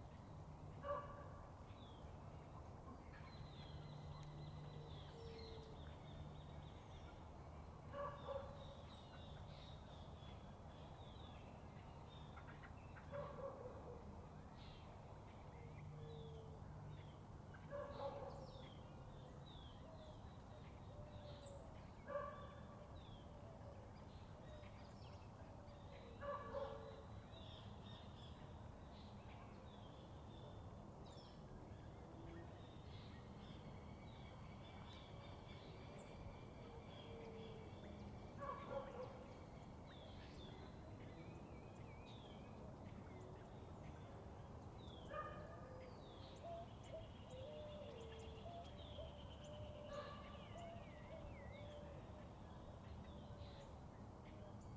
{"title": "Camino de Hueso, Mercedes, Buenos Aires, Argentina - Del Campo a la Ruta 1", "date": "2018-06-17 17:20:00", "description": "Recorriendo el Camino de Hueso, desde los límites rurales de Mercedes hasta la Ruta Nacional 5", "latitude": "-34.71", "longitude": "-59.44", "altitude": "44", "timezone": "America/Argentina/Buenos_Aires"}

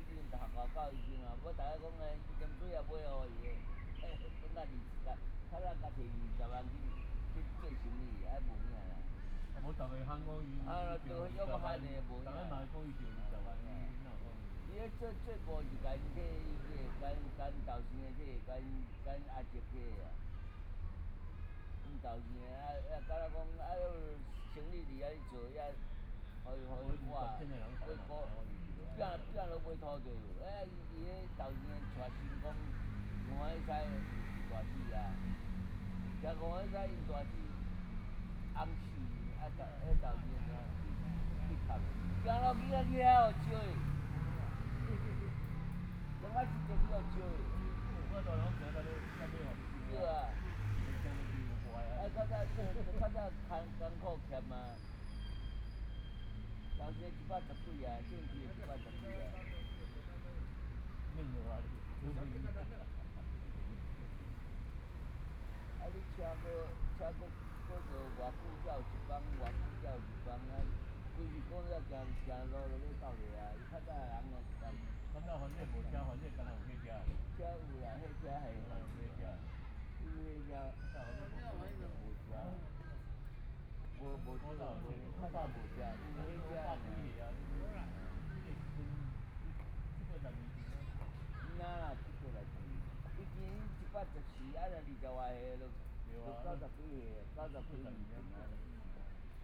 {"title": "YongZhi Park, Taipei City - chat", "date": "2014-02-25 17:34:00", "description": "Sitting in the park, Traffic Sound, Elderly voice chat, Birds singing\nBinaural recordings\nZoom H4n+ Soundman OKM II", "latitude": "25.08", "longitude": "121.55", "timezone": "Asia/Taipei"}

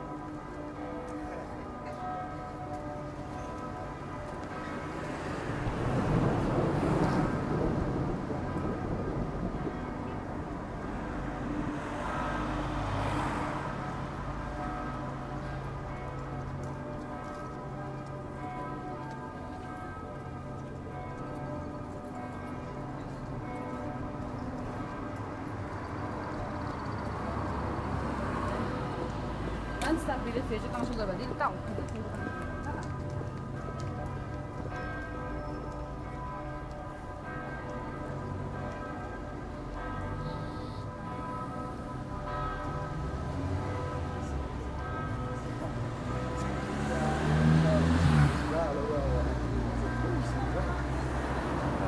{"title": "market place, traffic, church bells, st. gallen", "description": "bus and car traffic, people walking by, constantly accompanied by the bells of the cathedral a few hundred metres away. recorded aug 31st, 2008.", "latitude": "47.43", "longitude": "9.38", "altitude": "675", "timezone": "GMT+1"}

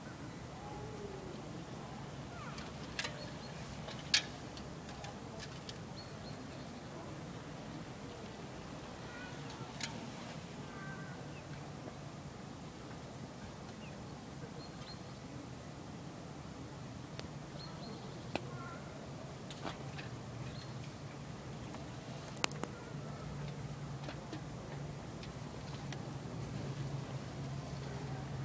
{"title": "Teich II, NAWI Salzburg, Austria - Teich II", "date": "2012-11-13 11:51:00", "latitude": "47.79", "longitude": "13.06", "altitude": "423", "timezone": "Europe/Vienna"}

